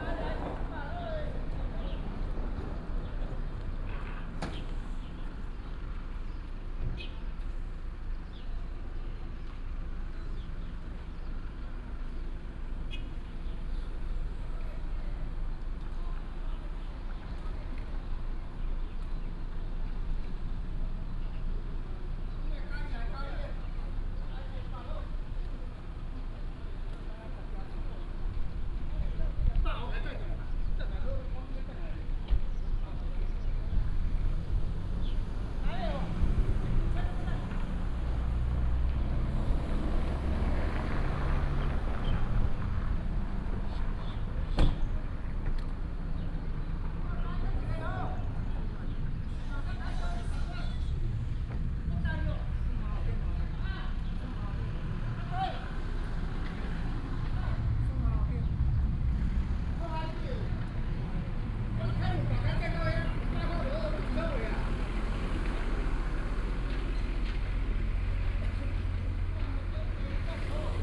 THSR Chiayi Station, 太保市崙頂里 - in the station square
in the station square, Bird call, Traffic sound, Taxi driver